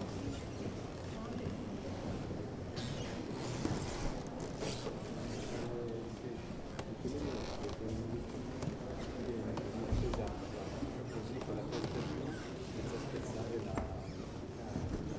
{"title": "Gropius Bau Berlin", "date": "2009-10-03 12:30:00", "description": "Masses of visitors at the Model Bauhaus exhibition make the wooden floor creek.", "latitude": "52.51", "longitude": "13.38", "altitude": "49", "timezone": "Europe/Berlin"}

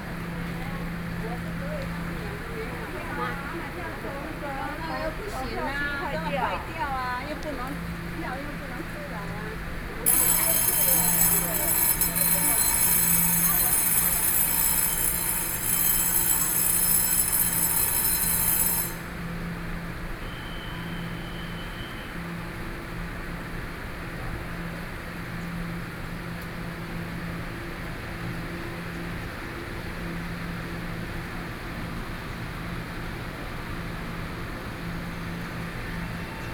{"title": "Yangmei Station - walk", "date": "2013-08-14 15:53:00", "description": "Slowly walk into the platform from the station hall, Sony PCM D50 + Soundman OKM II", "latitude": "24.91", "longitude": "121.15", "altitude": "155", "timezone": "Asia/Taipei"}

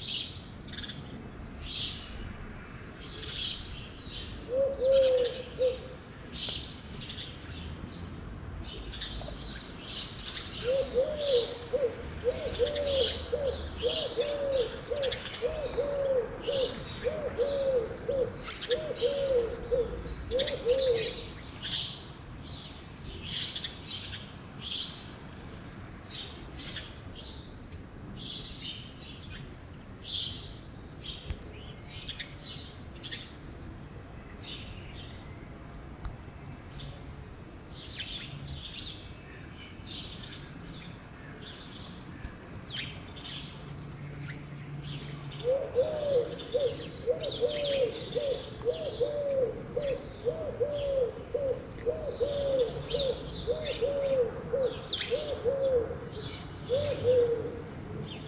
{"title": "Quartier du Biéreau, Ottignies-Louvain-la-Neuve, Belgique - In the kots area", "date": "2016-03-18 07:20:00", "description": "Places where students live are called \"kots\" in belgian patois. These are small apartment, on wide areas. Very quiet on the morning, very active on the evening, this is here an early morning, so quite, with birds everywhere.", "latitude": "50.66", "longitude": "4.62", "altitude": "138", "timezone": "Europe/Brussels"}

